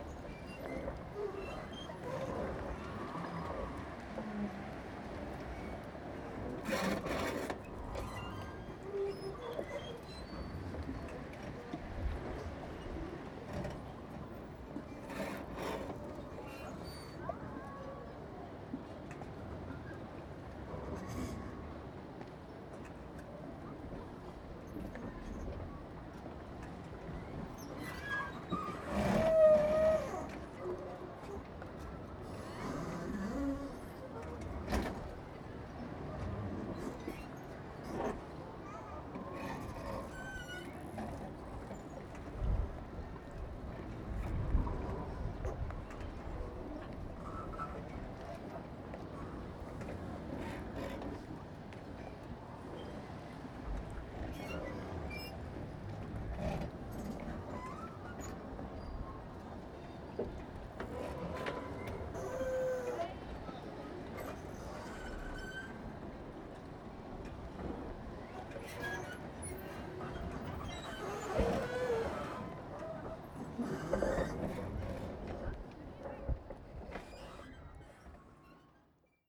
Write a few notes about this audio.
This was taken on a floating jetty. At the time there were a lot of tourists so the harbour was very busy and noisy, and there was nowhere to sit. I sat on the floating jetty where the sounds of the boats hitting and scraping against each other and their moorings blocked out most of the other sounds. Recorded with ZOOM H1, end of the recording was cut due to noise from the wind.